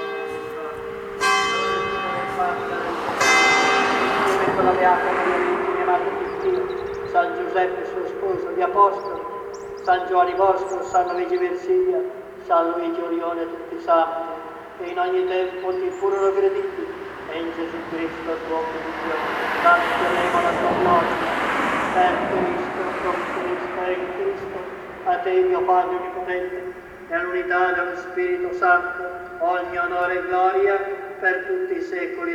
Roncole (PV), Italy - Eucharist celebration and fast cars
The Church of Roncole stands in front of the main street of the valley. The Sunday Eucharist Celebration was amplified through an old loudspeaker on the bell-tower but none was outside. The words of the celebration and of the choirs mixed with the noise of the fast cars on the street create a surrealistic effect. The tension releaases when, at the end of the celebration, people comes out.